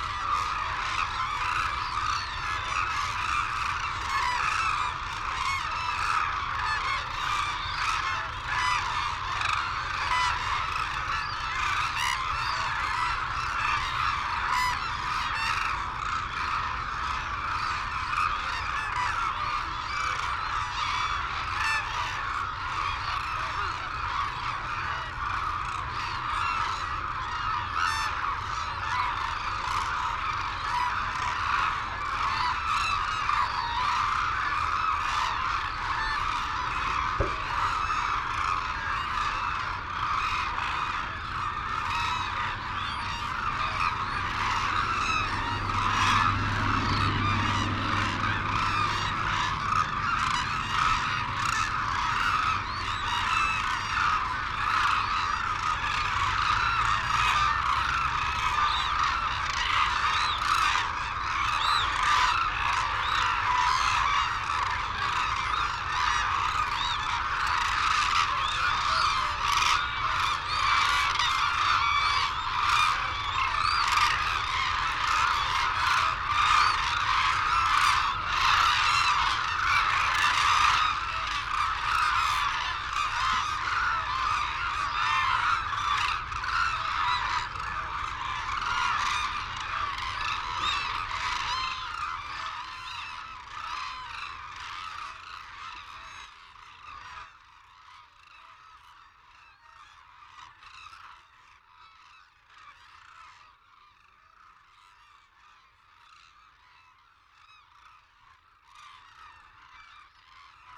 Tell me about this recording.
Arasaki Crane Centre ... calls and flight calls from white naped cranes and hooded cranes ... Telinga ProDAT 5 to Sony Minidisk ... wheezing whistles from young birds ...